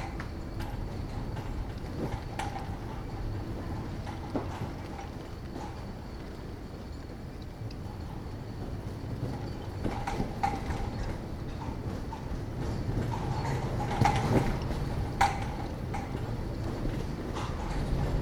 Vissershaven, Den Haag, Nederland - Wind blowing through 'The Hague Beach Stadium'
A stormy wind blowing through a deserted Beach Stadium in Scheveningen. The sound was more spectacular and diverse on a few other spots but I don't have the right equipment for that kind of wind.
Binaural recording.
Den Haag, Netherlands, 11 July 2016, ~21:00